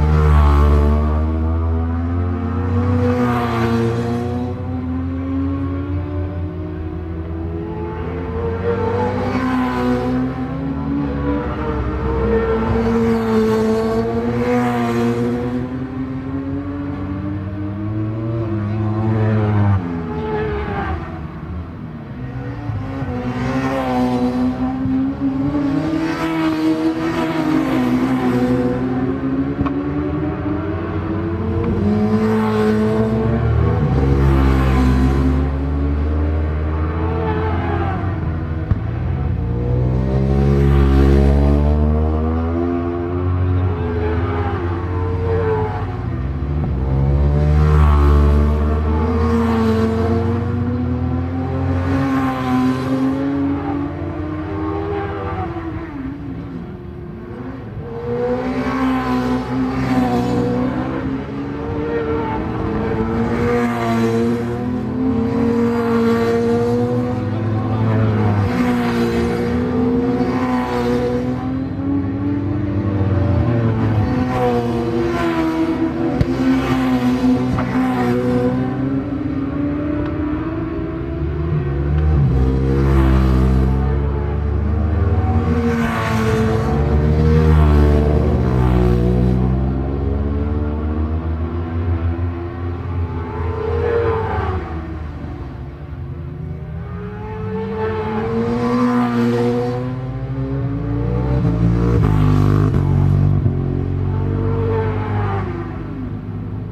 Leicester, UK - british superbikes 2002 ... superbikes ...
british superbikes 2002 ... superbike free practice ... mallory park ... one point stereo mic to minidisk ... date correct ... time not ...
England, United Kingdom, 14 September, 11:00